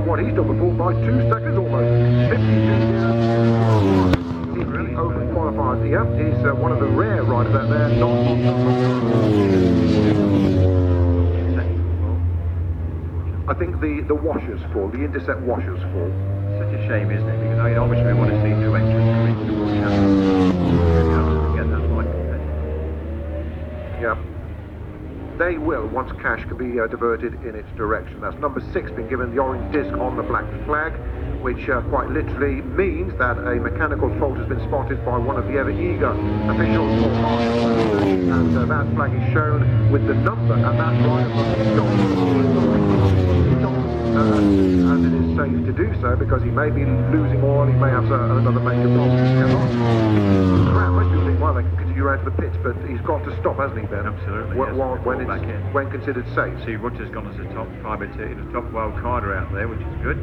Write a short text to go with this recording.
World Superbikes 2002 ... WSB free-practice contd ... one point stereo mic to mini-disk ... date correct ... time probably not ...